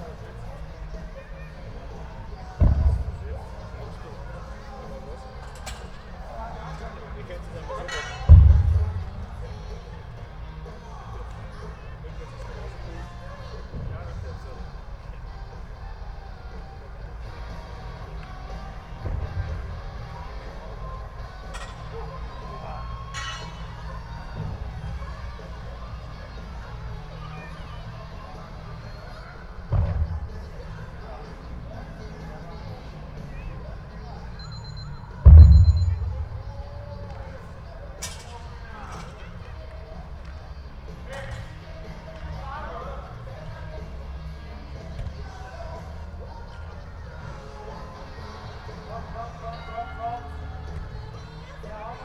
Hertzstraße, Linz, Austria - Keep fit class with tyre booms and heavyrock
Keep fit class for adults resounding between 2 concrete walls. The booming is a huge very heavy rubbertyre falling after it's been turned over.
Oberösterreich, Österreich, 8 September 2020, 19:00